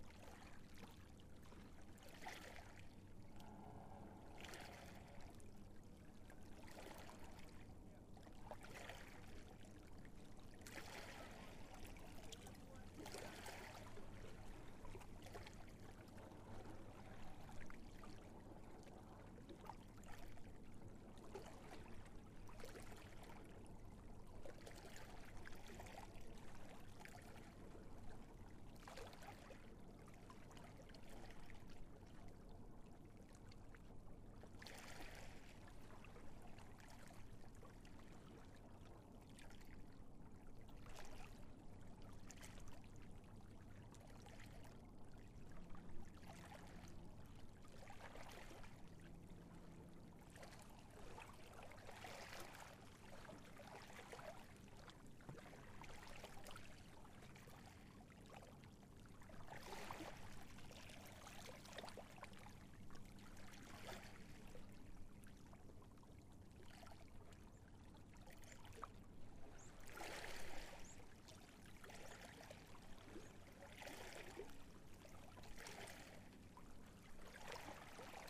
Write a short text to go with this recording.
A late afternoon in Vatlestraumen on the outskirt of Bergen, Norway. There was next to no wind for the first time i ages, so I ran out to do some recording of a narrow fjord. Vatlestraumen is a busy route in and out of Bergen harbor, so you can hear both smaller crafts, and bigger ships in this relatively short recording. There is also a nearby airport, and a bridge. You can also hear some birds in the background, Recorder: Zoom H6, Mic: 2x Røde M5 MP in Wide Stereo close to the water, Normalized to -7.0 dB in post